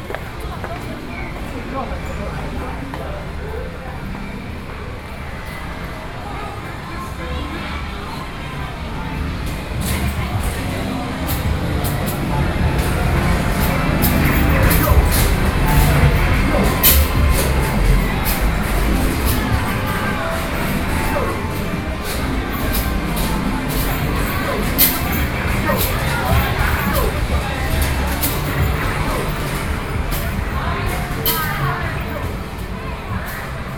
Sanchong, New Taipei city - Rooftop plaza